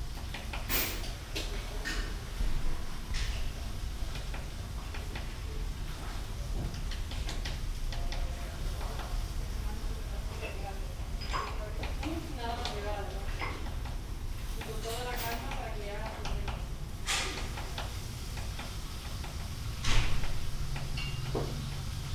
Berlin Bürknerstr., backyard window - neigbours cooking, a woodpecker
warm August day, neigbours are cooking, a woodpecker works in the trees
(Sony PCM D50, Primo EM172)
Berlin, Germany, 24 August 2016, 13:20